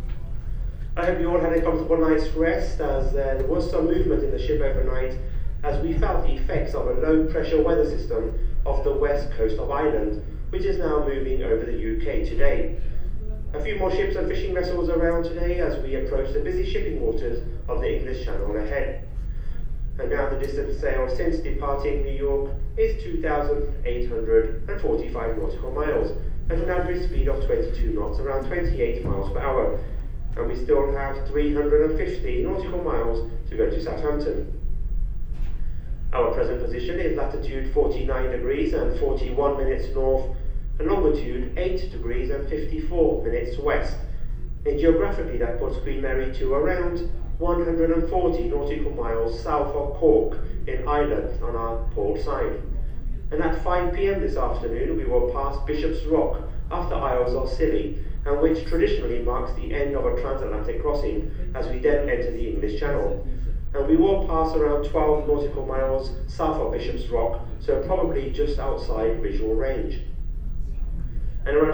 Western Approaches, North Atlantic Ocean. - Announcement
Recorded while walking around the art gallery on the Queen Mary 2 on the final full day of an Atlantic crossing from New York. In the morning we will be ashore at 7am in Southampton.
MixPre 3 with 2 x Beyer Lavaliers